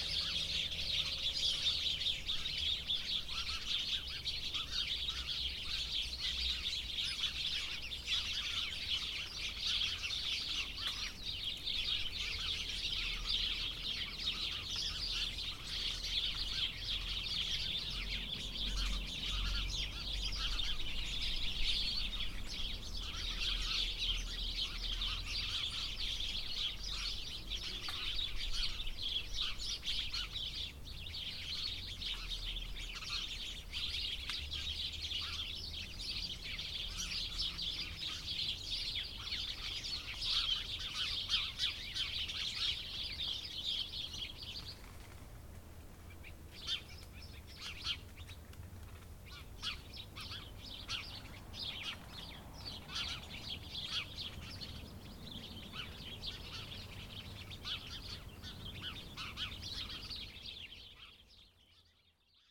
Rue de l'Église, Chindrieux, France - Moineaux
Piailleries de moineaux dans un massif de bambous.